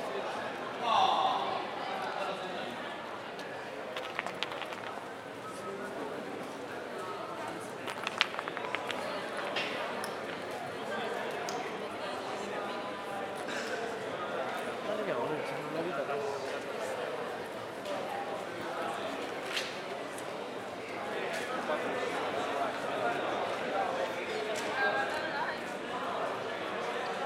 L'Aquila, cantoni - 2017-06-08 09-Quattro Cantoni
Ripresa in notturna
L'Aquila AQ, Italy, 8 June 2017